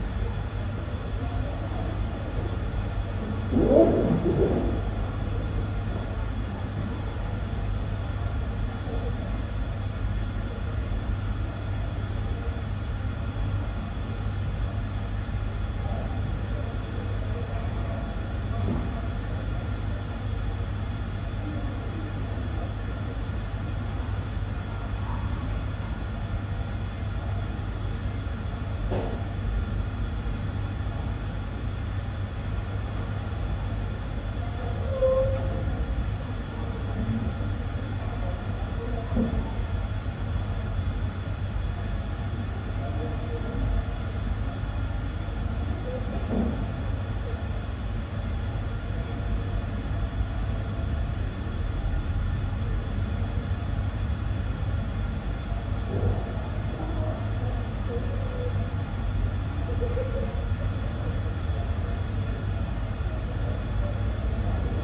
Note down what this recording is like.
Heating pipes often carry sound around buildings. Here the voices of people in the Mensa cafe a floor below mix in the with the system hums and hisses.